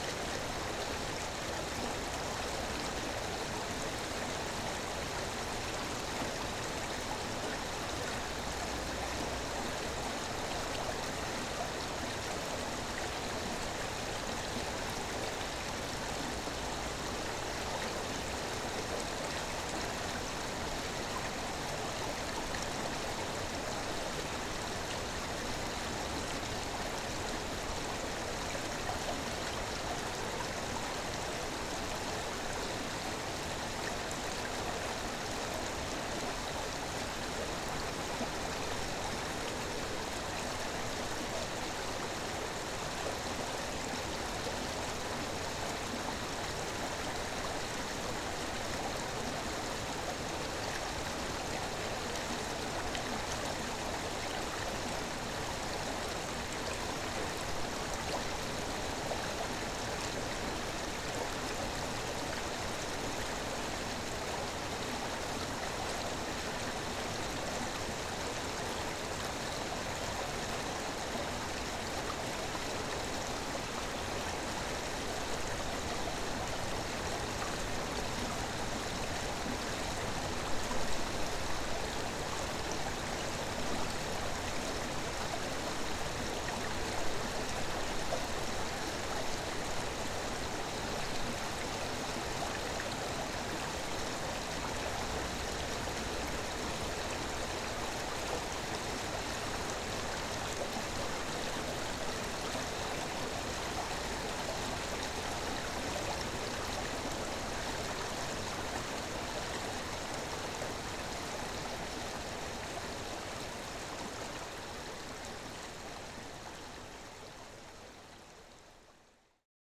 Joneliškės, Lithuania, river Viesa
small river Viesa under the bridge
Utenos rajono savivaldybė, Utenos apskritis, Lietuva, 1 May